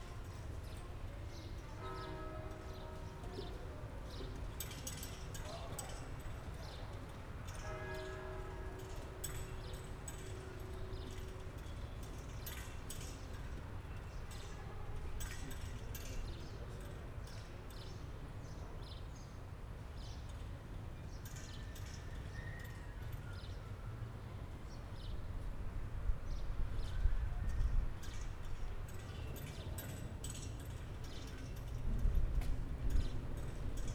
Iċ-Ċittadella, Victoria, Malta - square ambience
Pjazza Katidral, Victoria, Gozo island, afternoon ambience on cathedral square
(SD702, DPA4060)
4 April, ~16:00